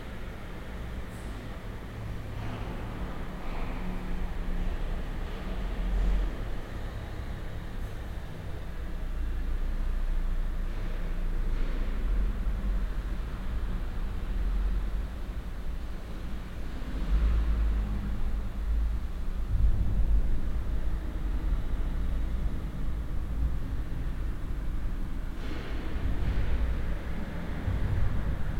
cologne, nord, neusserstrasse, hinterhofgebäude, treppenhaus
Grosses Treppenhaus in hohem Industriehinterhofgebäude, diverse Schritte
soundmap nrw: social ambiences, topographic field recordings